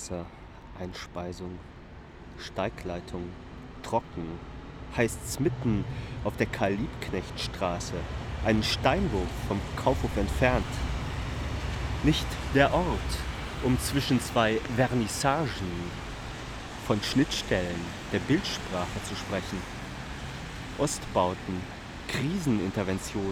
berlin: karl-liebknecht-straße - DOPAL: löschwassereinspeisung (fire water infeed)
poem by hensch
Descriptions Of Places And Landscapes: may 15, 2010
Berlin, Germany, 15 May